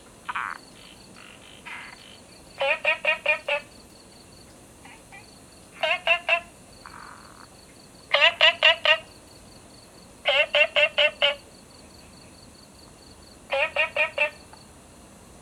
樹蛙亭, 南投縣埔里鎮桃米里 - Frogs chirping
Frogs chirping
Zoom H2n MS+XY
Nantou County, Puli Township, 桃米巷29-6號, 10 August, 22:23